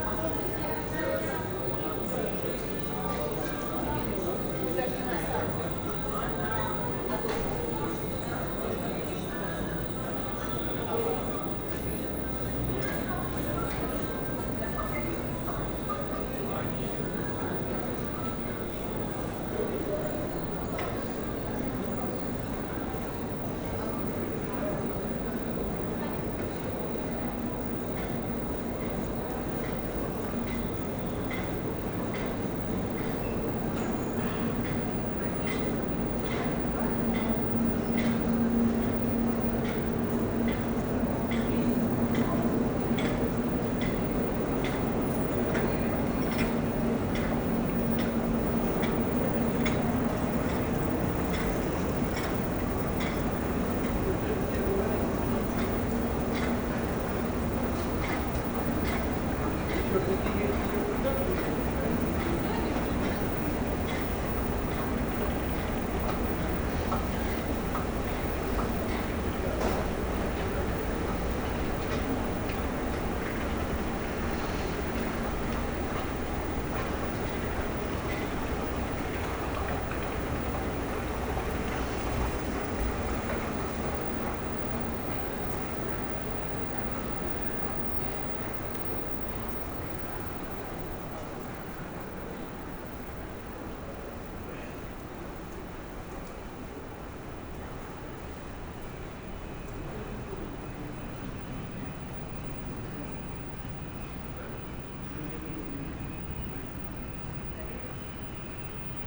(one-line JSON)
{"title": "Khimki Sheremetyevo airport, Russia - Sheremetyevo airport part 2", "date": "2018-09-15 14:45:00", "description": "Continuation of the recording.", "latitude": "55.96", "longitude": "37.41", "altitude": "190", "timezone": "Europe/Moscow"}